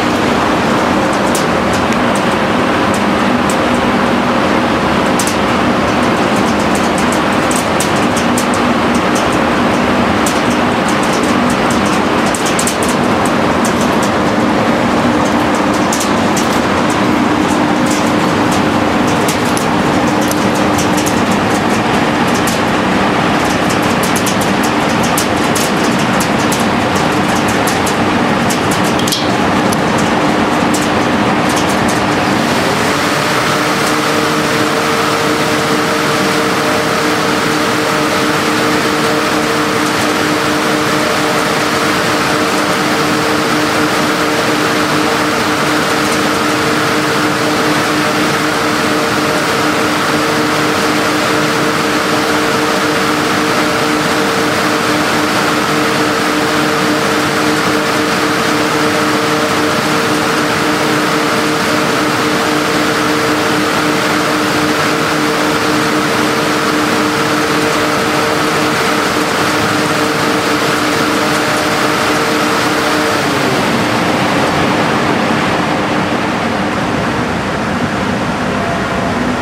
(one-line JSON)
{"title": "Berlin, Akademie der Künste, Hanseatenweg, Aircondition outside", "date": "2012-02-18 15:17:00", "description": "Akademie der Künste; aircondition", "latitude": "52.52", "longitude": "13.35", "altitude": "38", "timezone": "Europe/Berlin"}